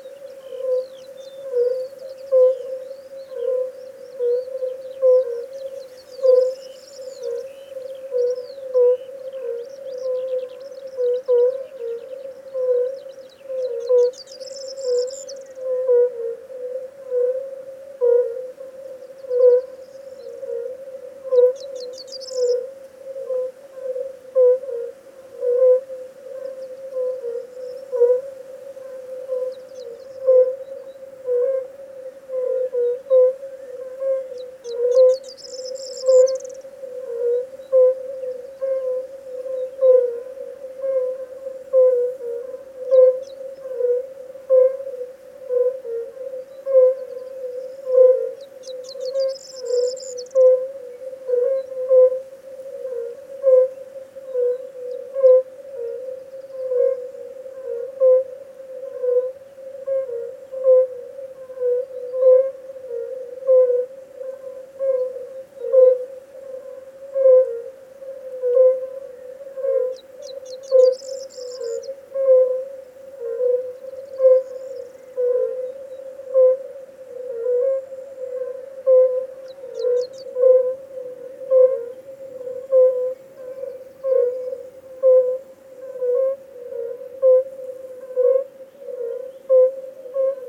During the afternoon in a field close to the small village of Benesti, some toads are singing, accompanied by a light wind and some birds.
Recording by an ORTF Setup Schoeps CCM4 microphones in a Cinela Suspension ORTF. Recorded on a Sound Devices 633.
Sound Reference: RO-180710T05
GPS: 44.662814, 23.917906
Recorded during a residency by Semisilent semisilent.ro/